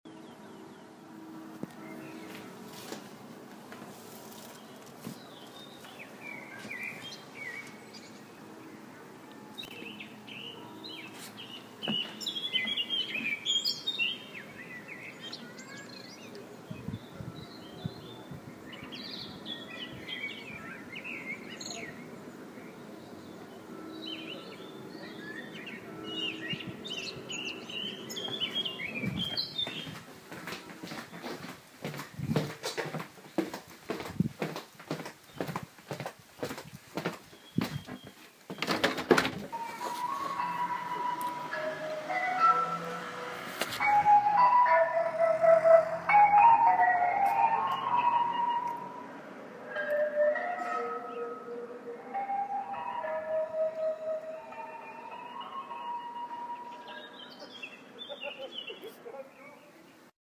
inner city Krefeld, Deutschland - mobile ice cream shop
...tried to catch the sound of the – mobile ice cream shop – coming up abruptly everyday in summertime, giving people a homelike feeling...recorded through the open window of a flat, using an iphone.